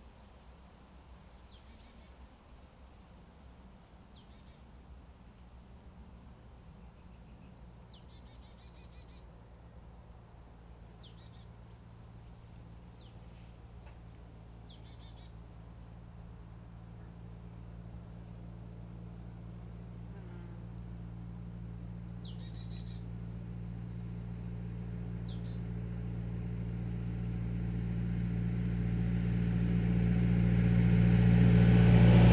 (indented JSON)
{
  "title": "Am Adelsberg, Bad Berka, Germany - Quiet spaces beneath Paulinenturm Bad Berka 2.",
  "date": "2020-07-23 13:54:00",
  "description": "Best listening with headphones on low volume.\nA relaxed atmosphere with soft breezes, prominent sound of a bird, soft bicycle tour and traffic with varied perspectives and field depths.\nThis location is beneath a tourist attraction \"Paulinenturm\".The Paulinenturm is an observation tower of the city of Bad Berka. It is located on the 416 metre high Adelsberg on the eastern edge of the city, about 150 metres above the valley bottom of the Ilm.\nRecording and monitoring gear: Zoom F4 Field Recorder, LOM MikroUsi Pro, Beyerdynamic DT 770 PRO/ DT 1990 PRO.",
  "latitude": "50.90",
  "longitude": "11.29",
  "altitude": "323",
  "timezone": "Europe/Berlin"
}